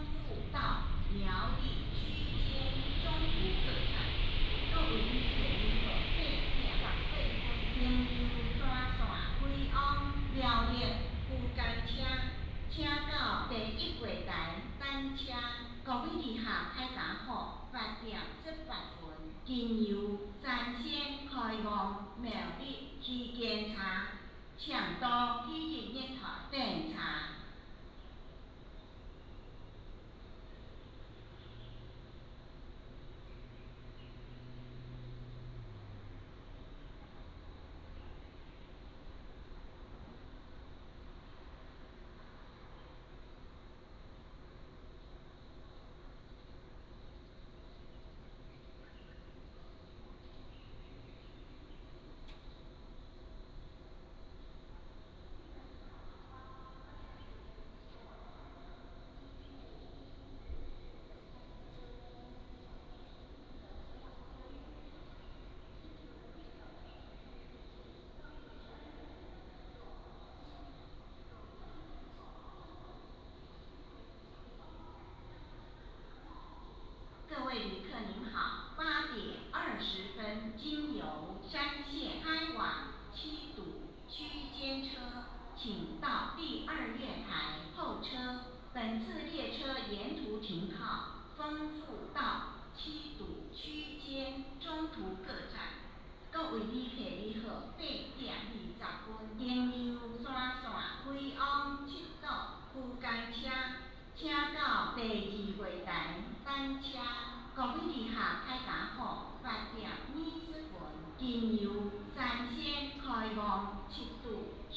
Fengfu Station, 後龍鎮校椅里 - At the station platform
Station information broadcast, High-speed train passing through, Footsteps
Miaoli County, Taiwan, 16 February 2017